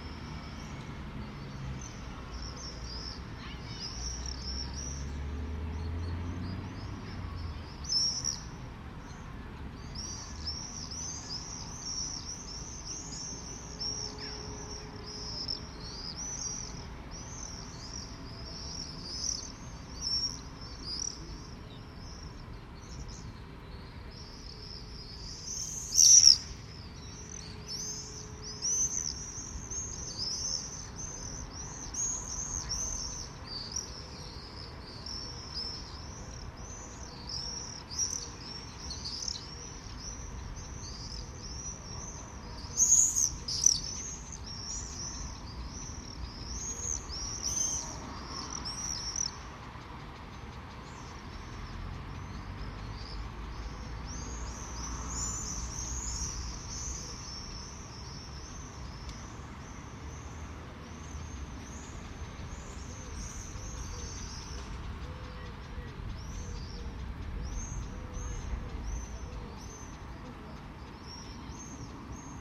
Halesworth market town; sounds of summer through the attic skylight - Roosting rooks flock high above and swifts scream as dusk falls
The darkening evening. Rooks fly back to their roost in flocks of hundreds, maybe thousands. The town gradually quietens after a unusually hummy vehicle (maybe agricultural) passes slowly into the distance.
England, United Kingdom